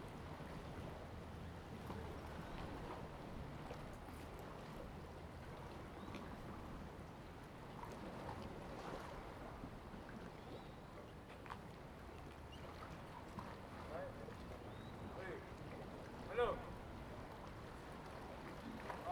紅頭村, Ponso no Tao - Small pier
Small pier
Zoom H2n MS +XY